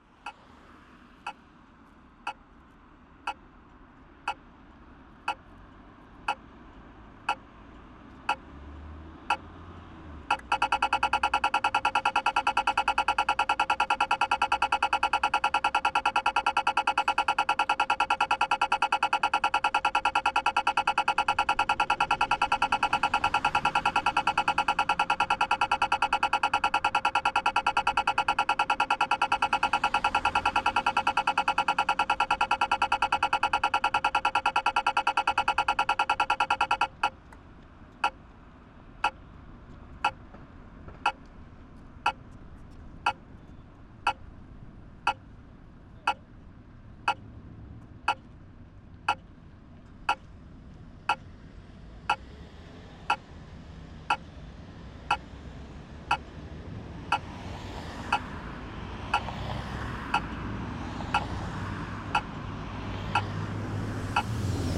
Malmö, Sweden - Red light signal
A classical sound of red light signal for pedestrians, in Sweden.
17 April 2019